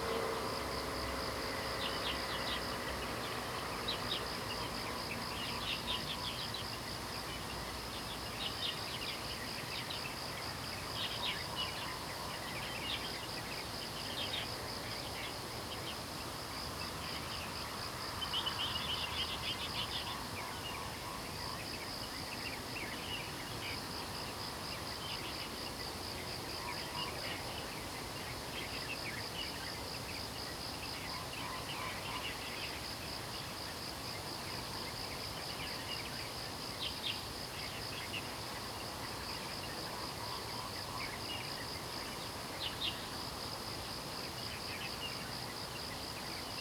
Birds sound, In the morning
Zoom H2n MS+XY